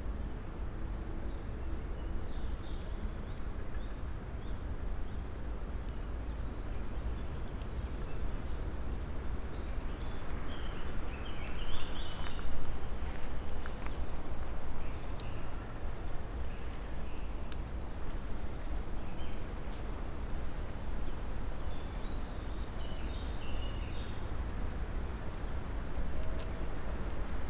dale, Piramida, Slovenia - slow walk
dry leaves, wind, birds, small dry things falling down from tree crowns, distant creaks, train ...
Vzhodna Slovenija, Slovenija